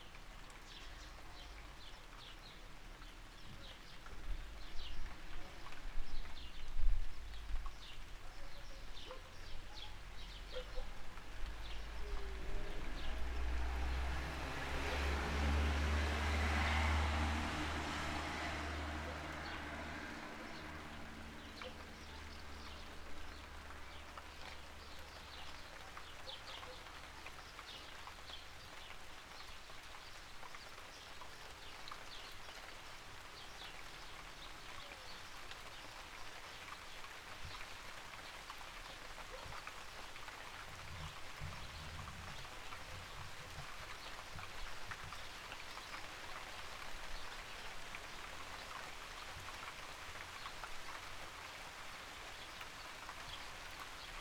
Zmeyovo, Bulgaria - Rain in the village of Zmeyovo
A short rain shower in the quiet village of Zmeyovo. Recorded with a Zoom H6 with the X/Z microphone.
Бългaрия